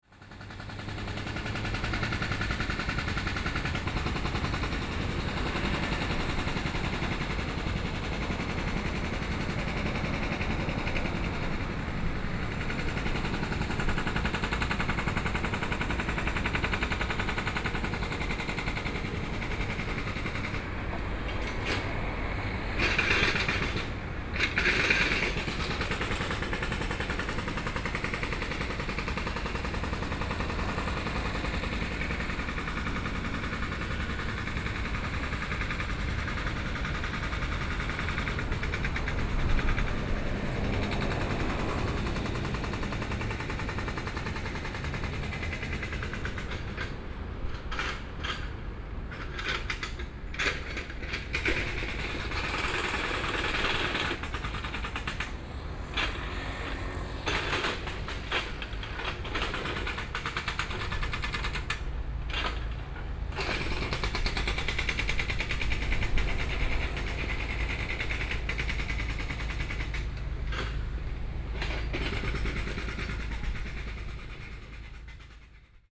Road construction noise, Traffic Sound, Hot weather